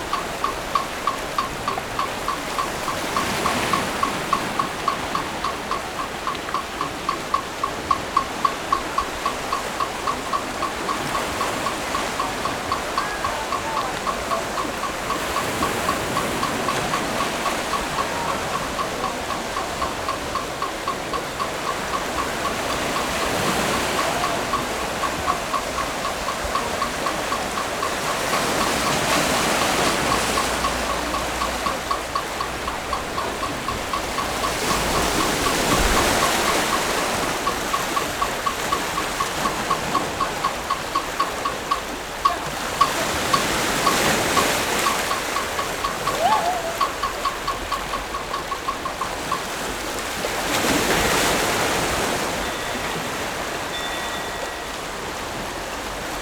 石門洞, New Taipei City - The sound of the waves